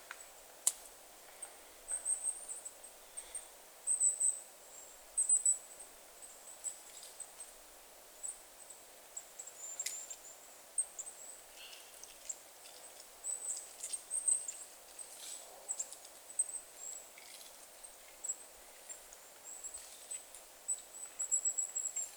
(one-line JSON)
{"title": "Lithuania, Utena, birdies in winter", "date": "2012-01-15 13:30:00", "description": "little birdies picking food in wintery wood", "latitude": "55.53", "longitude": "25.59", "altitude": "123", "timezone": "Europe/Vilnius"}